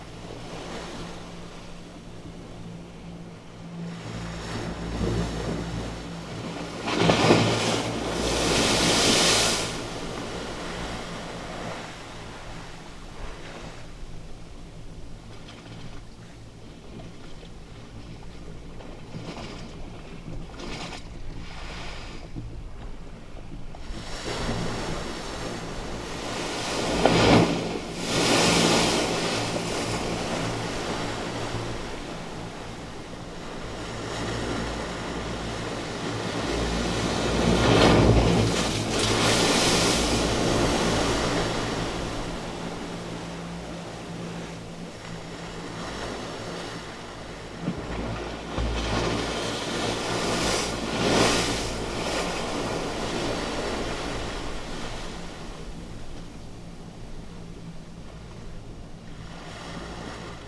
BoulogneSur Mer-Sea wall
Surf resonating in a pipe through the sea wall